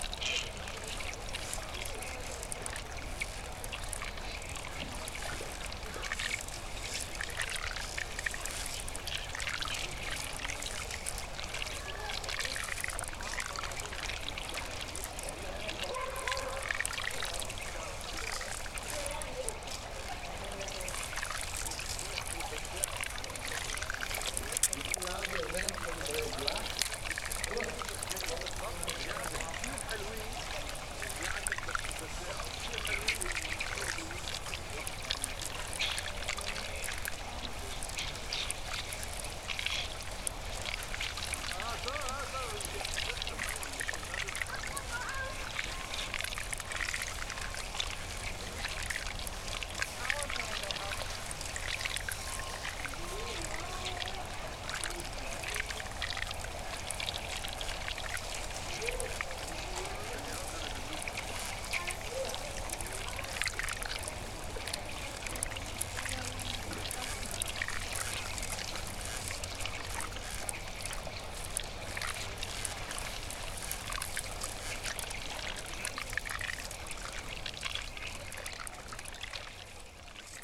Ontario Place, Lake Shore Blvd W, Toronto, ON, Canada - Whispering ice
Big patches of ice floating on light waves, recorded on the pier of Ontario Place marina. Tascam DR05, EM172 mics